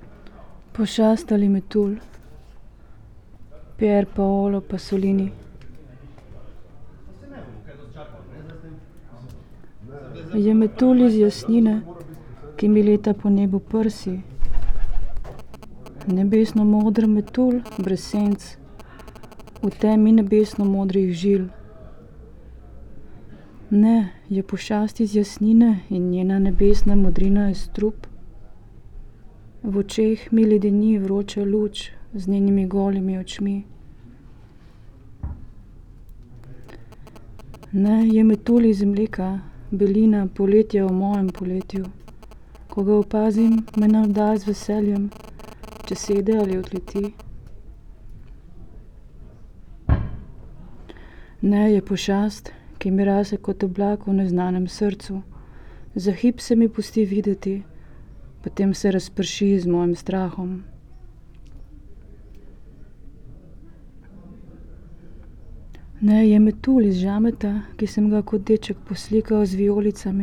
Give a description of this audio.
reading poem Pošast ali Metulj? (Mostru o pavea?), Pier Paolo Pasolini